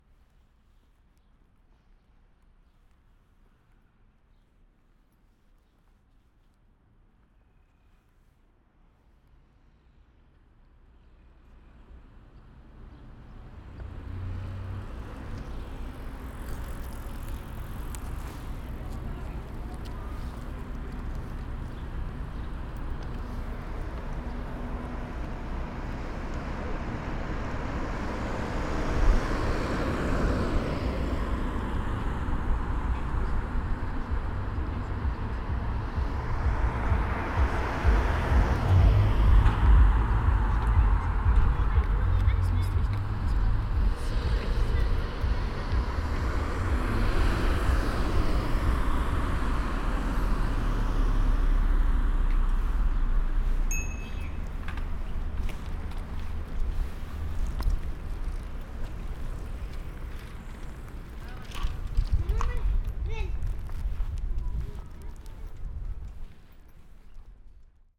People and vehicular traffic.
Recording Gear: Zoom F4 Field Recorder, LOM MikroUsi Pro.
Thüringen, Deutschland